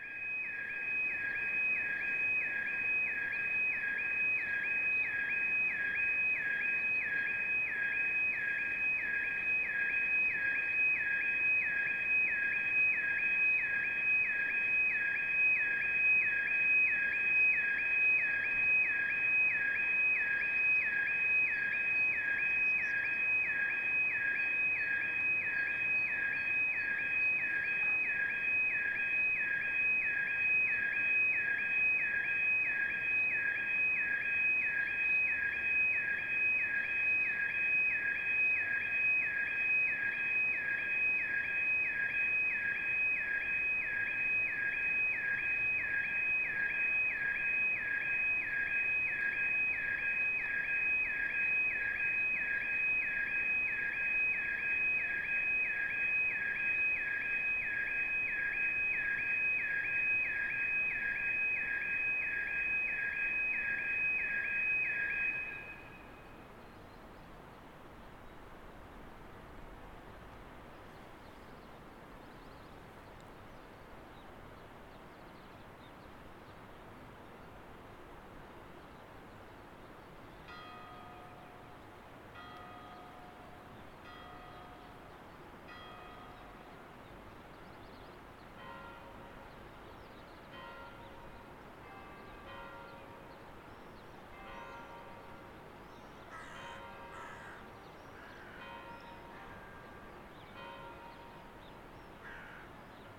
Schloßberg, Graz, Österreich - Car-alarm, clocktower and church-bells at 7 oclock
The internal condenser-mics of my fieldrecorder (H4N Pro) were used. The device stood on the stonewall around the clocktower on a small stand and pointed south-west.
It was a sunny morning, which was particularly silent at first, because of the corona-virus lockdown. All of a sudden the alarm of a car standing somewhere far away began to beep loudly, and the noise overlaid the whole area around the "Schlossberg" and "Hauptplatz". Shortly after the alarm had stopped the curch-bells of Graz began to ring at 7 o'clock in the morning, together with the bells of the clocktower immediately behind me. After the churches went quiet again, I stopped the recording.
Steiermark, Österreich